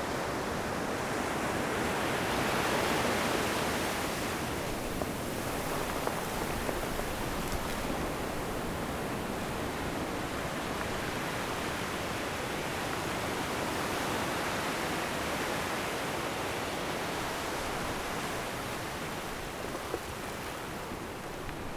Taitung City, Taiwan - Sound of the waves
Sound of the waves, Zoom H6 M/S
Taitung County, Taiwan, 17 January 2014